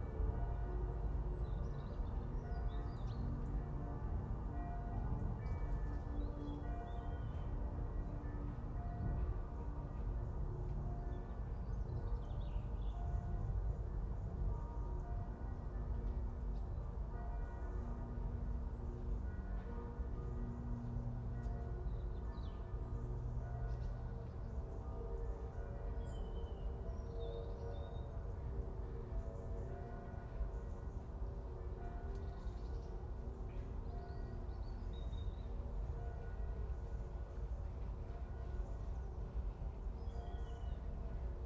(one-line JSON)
{"title": "Rain, trains, clangy bells, autumn robin, ravens, stream from the Schöneberger Südgelände nature reserve, Berlin, Germany - Clangy bells, an autumn robin sings, fast train, distant helicopter", "date": "2021-11-28 09:46:00", "description": "Nearer clangier bells begin. A distant robin sings – nice to hear in the cold autumn. A train passes at speed joined by a droning helicopter.", "latitude": "52.46", "longitude": "13.36", "altitude": "45", "timezone": "Europe/Berlin"}